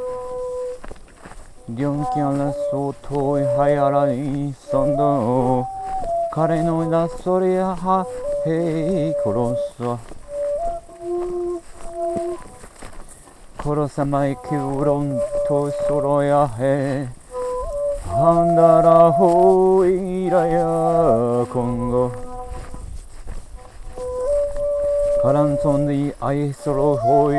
walking session in humid zone - KODAMA session
session while walking in a wet zone of the woods.
Recorded during KODAMA residency september 2009
France, October 2009